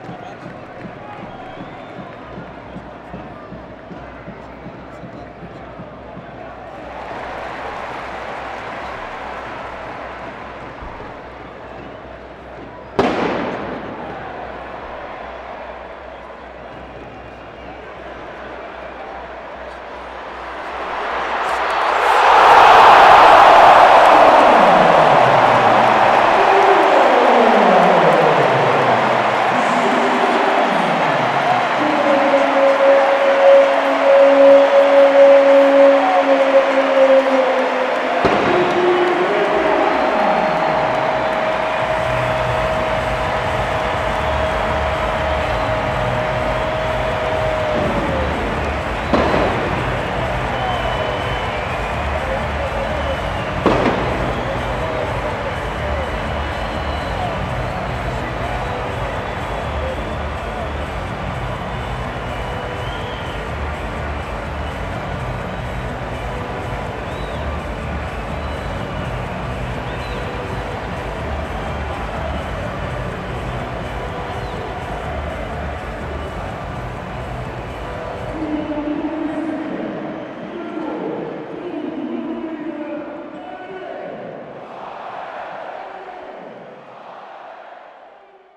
Estádio da Luz Benfica, Portugal - Stadium ambience Benfica - Vitória de Setubal
Soccer match between Sport Lisboa Benfica vs Vítória Futebol Clube
Benfica scores a goal in the final part (1'51'') of the sound.
Recorded from the press tribune
H6 Zoom recorder
XY stereo recording
Lisbon, Portugal, 4 May 2014, ~19:00